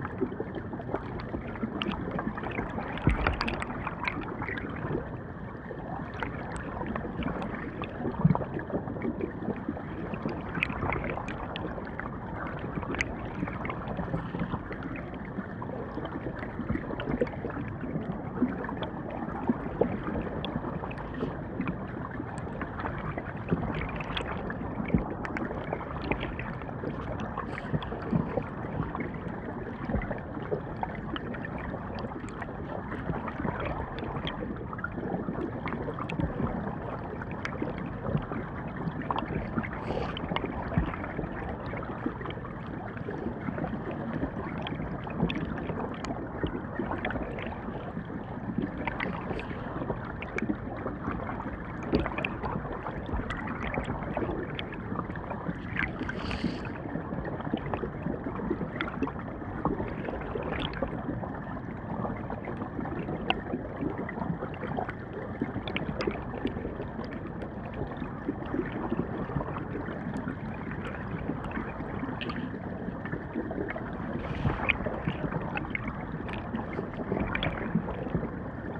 Recorded with a pair of JrF D-Series hydrophones and a Marantz PMD661
TX, USA, 12 December 2015